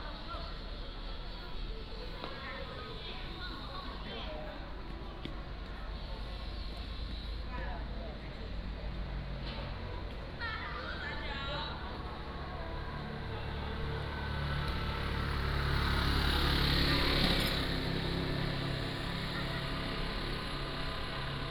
坂里國民小學, Beigan Township - Small village
next to the school, Traffic Sound, Small village